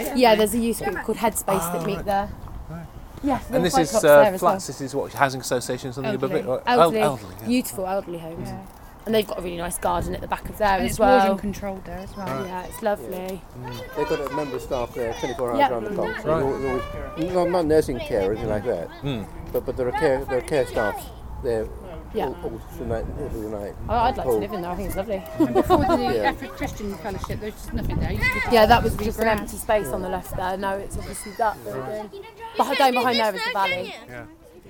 Efford Walk Two: More on Efford library - More on Efford library